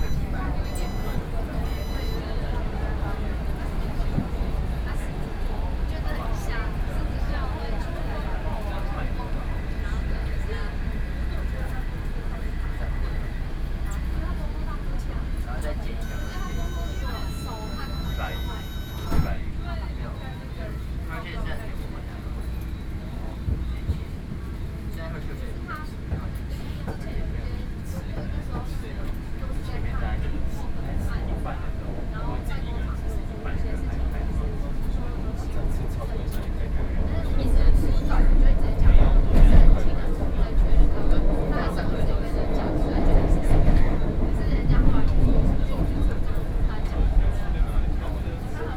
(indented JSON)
{
  "title": "Beitou - inside the Trains",
  "date": "2013-06-14 18:27:00",
  "description": "MRT Train, Sony PCM D50 + Soundman OKM II",
  "latitude": "25.12",
  "longitude": "121.51",
  "altitude": "14",
  "timezone": "Asia/Taipei"
}